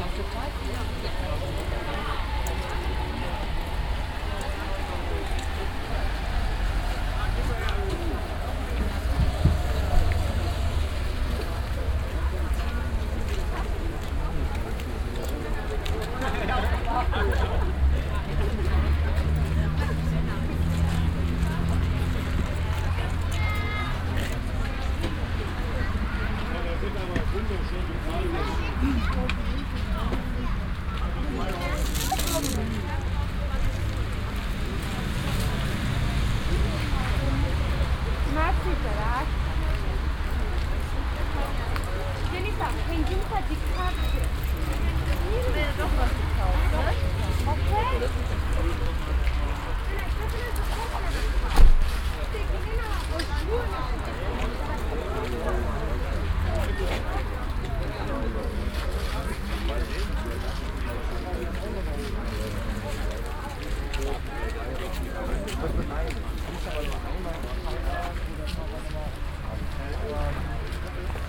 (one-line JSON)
{"title": "cologne, greinstreet, parking area, sunday flee market", "date": "2009-08-19 13:30:00", "description": "sunday morning, regular flee market on a parking area\nsoundmap nrw: social ambiences/ listen to the people in & outdoor topographic field recordings", "latitude": "50.92", "longitude": "6.93", "altitude": "53", "timezone": "Europe/Berlin"}